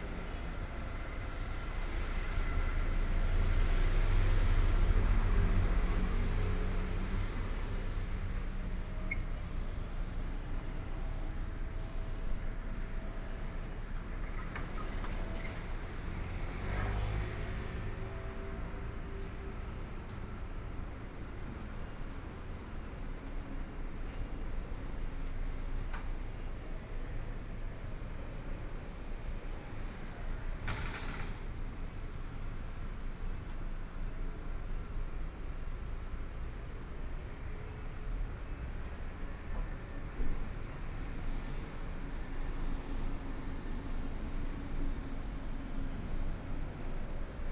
Tianxiang Rd., Zhongshan Dist. - walking on the Road
Walking in the road, Through different Various shops, Binaural recordings, Zoom H4n+ Soundman OKM II